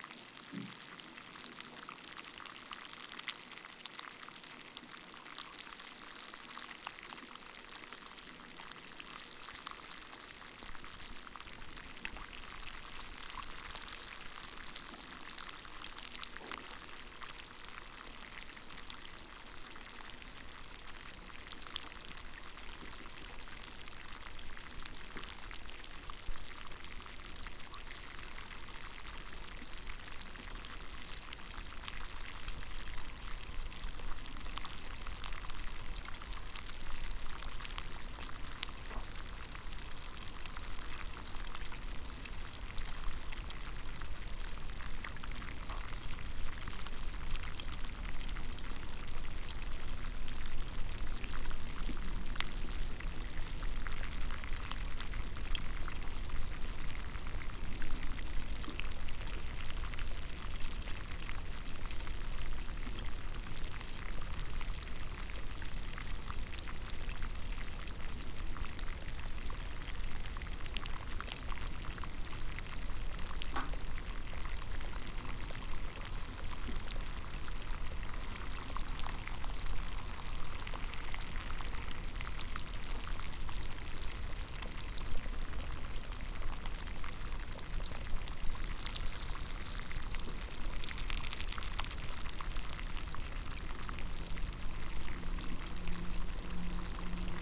막걸리 만들기 과정 (시작 120시 후에) Rice wine fermentation (5th day)
막걸리 만들기 과정_(시작 120시 후에) Rice wine fermentation (5th day)